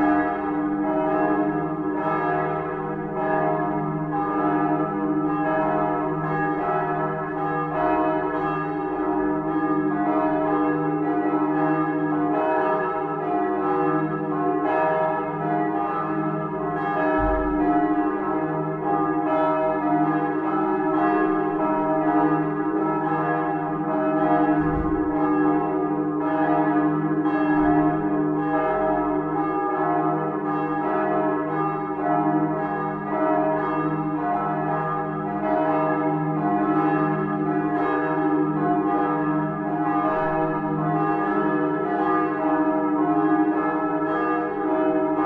Burgstraße, Lingen (Ems), Deutschland - Sunday Morning Bells, St. Bonifatius Church
8 am, Sunday morning, recorded from across the church
Sound Devices Recorder and beyerdynamics MCE82 mic
First aporee recording from this rural region called "Emsland" :-)